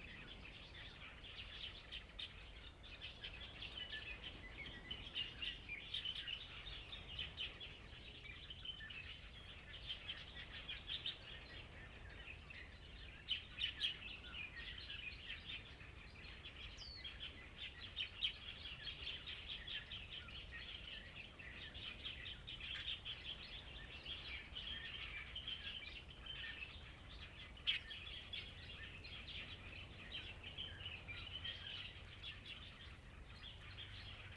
Birds singing, sound of the waves, In the park, In the woods
Zoom H2n MS +XY
Penghu County, Husi Township, 澎20鄉道, 21 October 2014